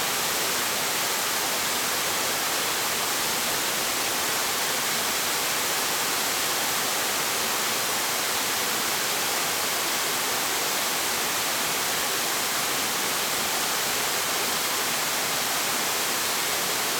撒固兒瀑布, Xiulin Township - waterfall
waterfall
Zoom H2n MS+XY +Sptial Audio
Hualien City, Hualien County, Taiwan, December 2016